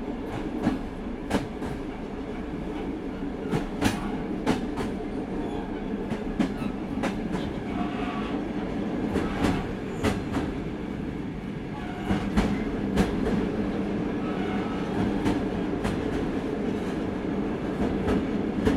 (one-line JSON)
{"title": "tambour train, Arkhangelsk Region, Russia - tambour train", "date": "2013-05-16 14:00:00", "description": "tambour train\nRecorded on Zoom H4n.\nВ тамбуре поезда.", "latitude": "64.43", "longitude": "40.17", "altitude": "13", "timezone": "Europe/Moscow"}